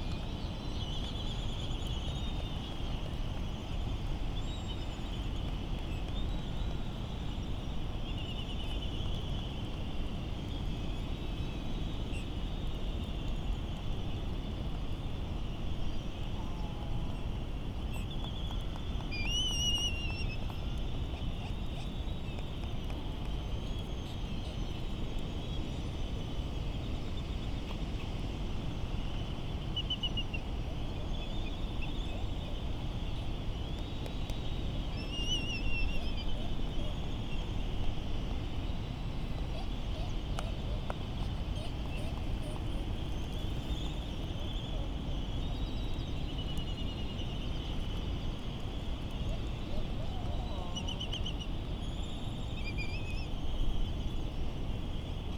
United States Minor Outlying Islands - Laysan albatross soundscape ...
Laysan albatross soundscape ... Sand Island ... Midway Atoll ... recorded in the lee of the Battle of Midway National Monument ... open lavalier mics either side of a furry table tennis bat used as a baffle ...laysan albatross calls and bill clapperings ... very ... very windy ... some windblast and island traffic noise ...
19 March 2012